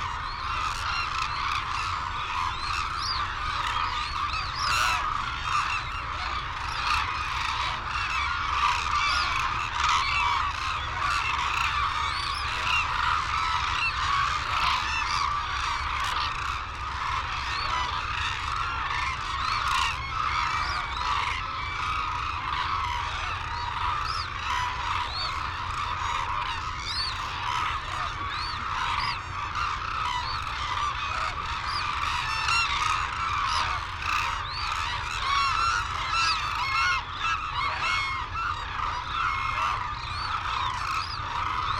Sho, Izumi, Kagoshima Prefecture, Japan - Crane soundscape ...
Arasaki Crane Centre ... calls and flight calls from white naped cranes and hooded cranes ... Telinga ProDAT 5 to Sony Minidisk ... wheezing whistles from young birds ...